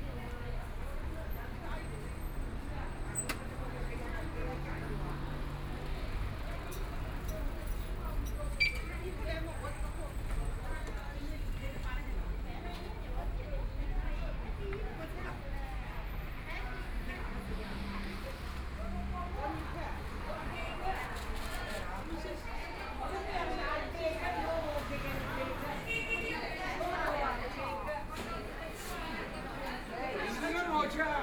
Walking in the market within, Binaural recording, Zoom H6+ Soundman OKM II